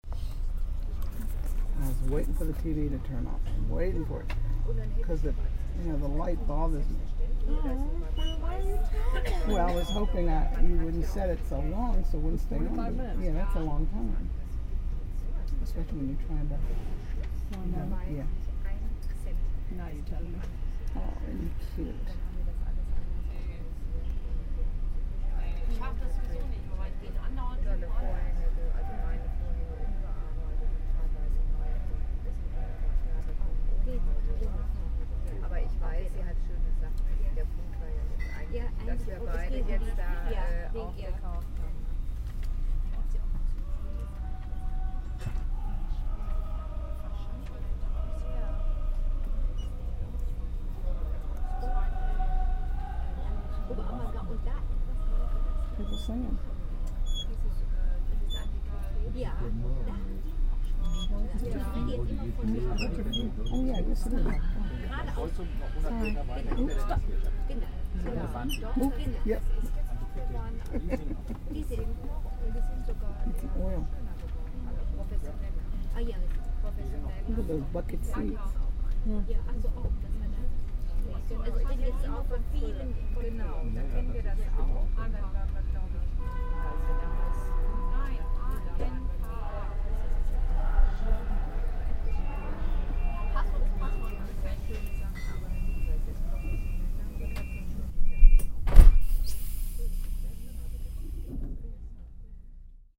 cologne, main station, in the train before leaving
recorded june 6, 2008. - project: "hasenbrot - a private sound diary"